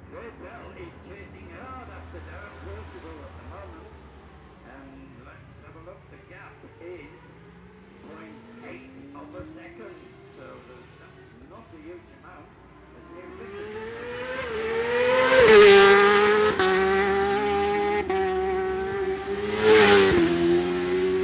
Barry Sheene Classic Races 2009 ... one point stereo mic to minidisk ... 600 bikes ... in line fours and twins ...